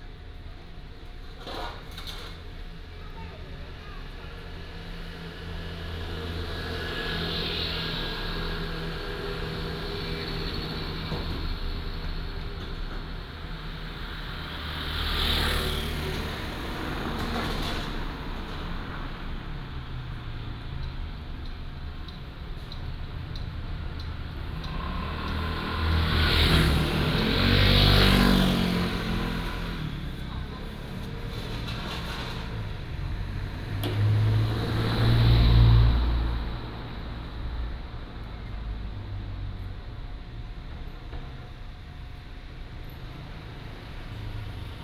Small street, Traffic sound, Vendors